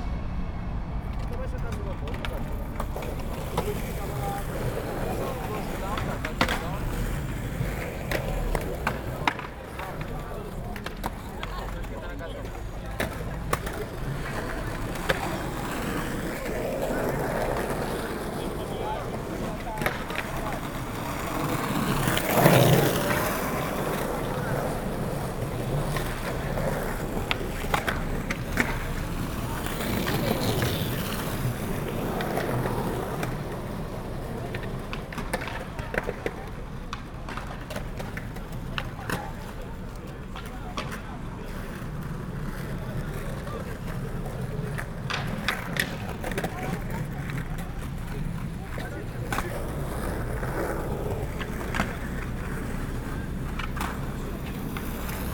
skaters at Praça da Batalha, porto